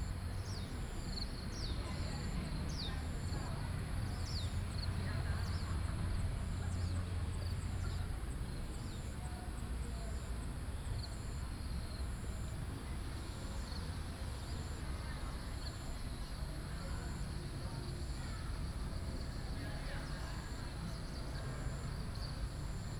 桃米紙教堂, 南投縣埔里鎮桃米里 - Insects sounds
Insects sounds, Tourists sound
Nantou County, Puli Township, 桃米巷54號